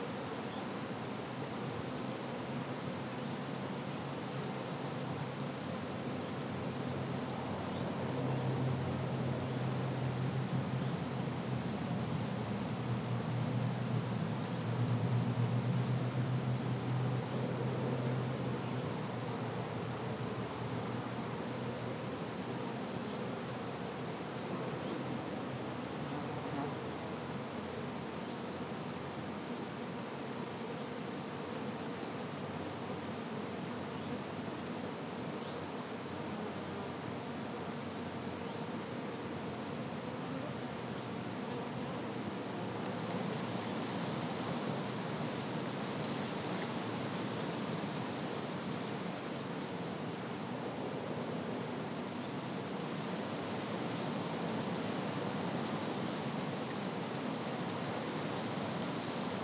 An Sanctoir, Bawnaknockane, Ballydehob, Co. Cork, Ireland - Soundwalk at An Sanctóir on World Listening Day 2021
To celebrate World Listening Day, an annual event since 2010, a soundwalk was organized in the secluded nature reserve at An Sanctóir in the heart of West Cork. Seven participants took their ears for a walk and enjoyed a beautiful afternoon.